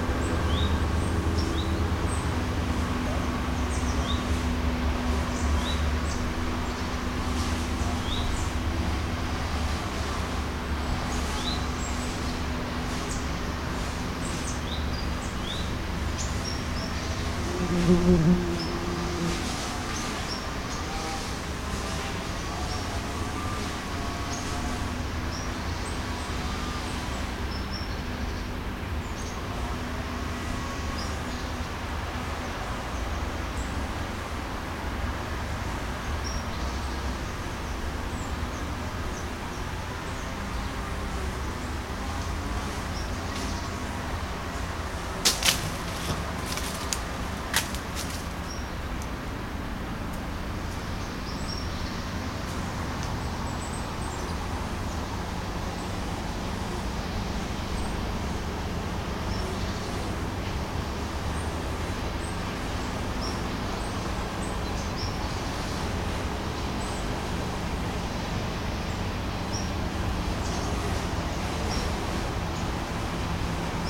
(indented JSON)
{
  "title": "leipzig lindenau, privater see zwischen friesenstraße und wasserstraße",
  "date": "2011-09-01 12:46:00",
  "description": "see auf öffentlich begehbarem privatgrundstück zwischen friesen- und wasserstraße hinter der sportanlage, direkt an der kulturwerkstatt. eicheln fallen ins wasser, schritte, vogelstimmen, sogar eine hummel kurz am mikrophon, im hintergrund eine motorsäge und autos. und zwei nieser...",
  "latitude": "51.34",
  "longitude": "12.33",
  "altitude": "106",
  "timezone": "Europe/Berlin"
}